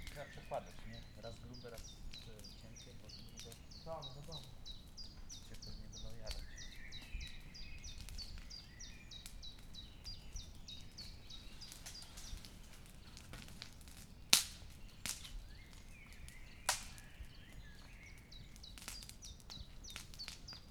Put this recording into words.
man and his son burning branches and leaves. (sony d50)